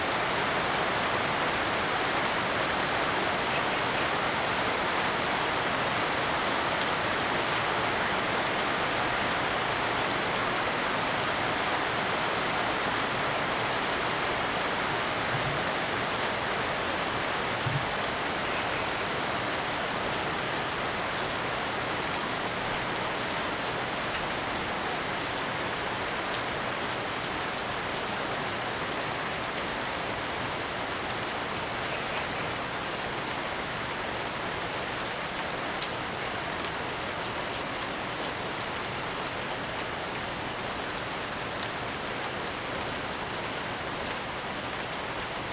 樹蛙亭庭園餐廳, 埔里鎮桃米里, Taiwan - Heavy rain
Frog chirping, Heavy rain
Zoom H2n MS+ XY